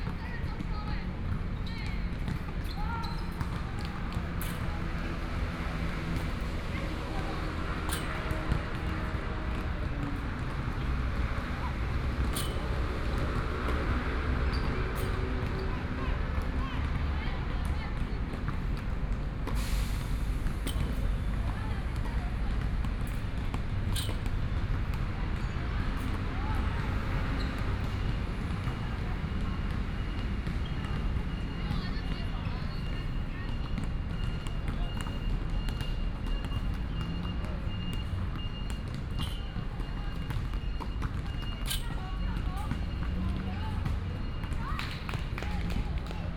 NATIONAL TAIWAN UNIVERSITY COLLEGE OF MEDICINE - Next to the stadium
Next to the stadium, Basketball, Tennis, Environmental Noise, Traffic Sound
Sony PCM D100 + Soundman OKM II